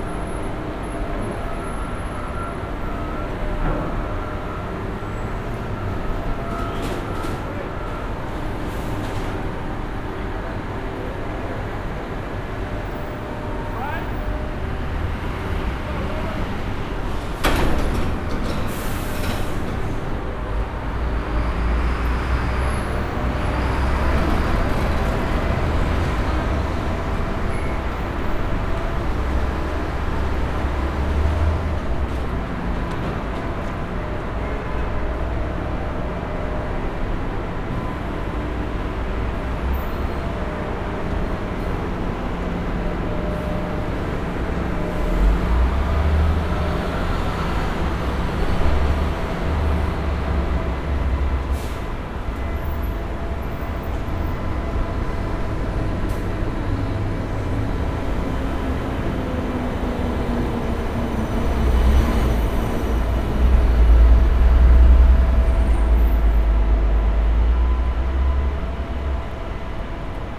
W 30th St, New York, NY, USA - Mega Construction Site 2
Until not too long ago, this part of Manhattan was a mega construction site
27 March, 14:32